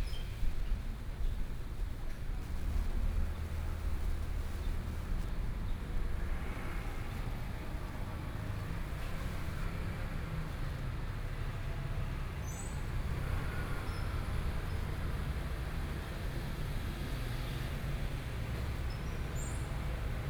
Noon, the streets of the community, traffic noise, Sony PCM D50+ Soundman OKM II
Taoyuan County, Taiwan